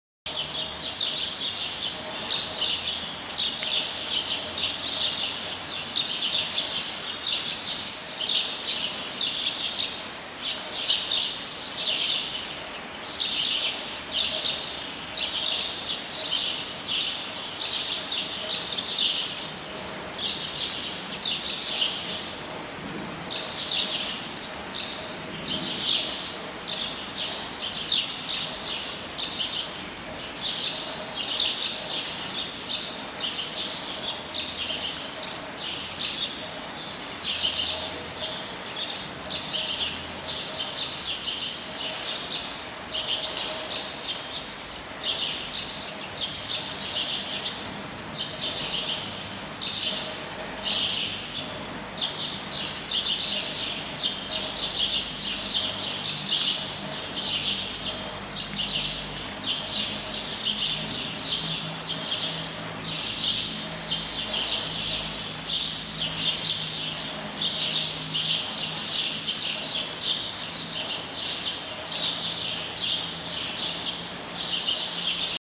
birds in courtyard during rain
birds, courtyard, rain, rome, italy